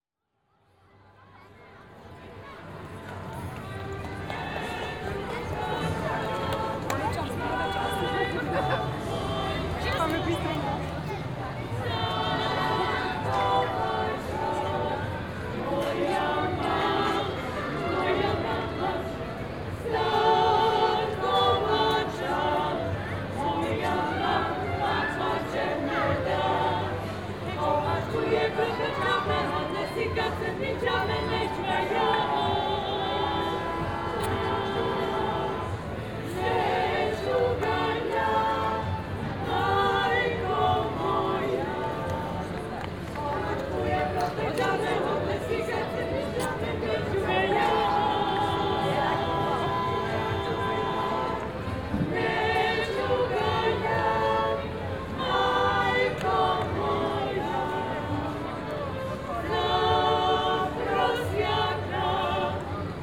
Cathedrals square, Kotor, Montenegro - (225 BI) Choir on Cathedrals square
Binaural recording of a street music melt: choir and some other band on the other square in the background.
Recorded with Soundman OKM on Sony PCM D100
Opština Kotor, Crna Gora / Црна Гора, 17 July 2017